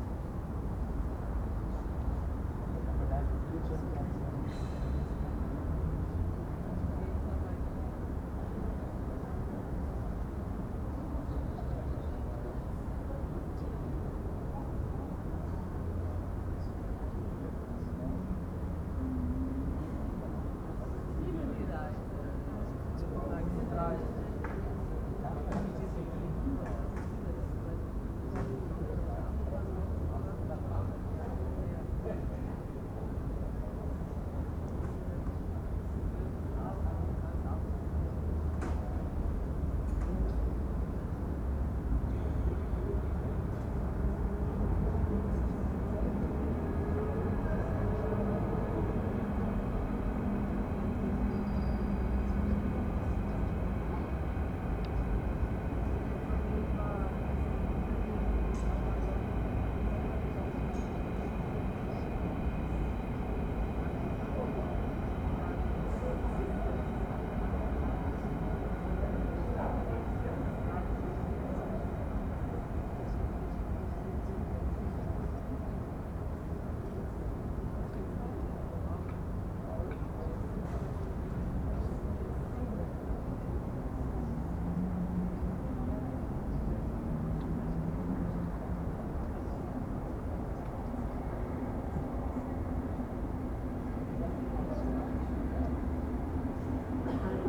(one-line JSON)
{"title": "berlin, john-foster-dulles-allee: haus der kulturen der welt, restaurantterrasse - the city, the country & me: terrace of the restaurant at house of the cultures of the world", "date": "2011-08-05 23:49:00", "description": "terrace of the restaurant at house of the cultures of the world short before closing time\nthe city, the country & me: august 5, 2011", "latitude": "52.52", "longitude": "13.36", "altitude": "32", "timezone": "Europe/Berlin"}